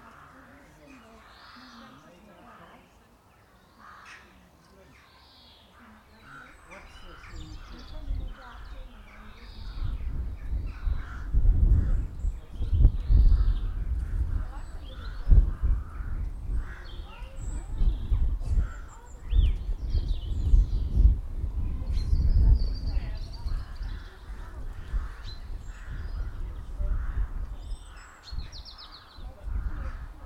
St James, Avebury, UK - 047 Avebury churchyard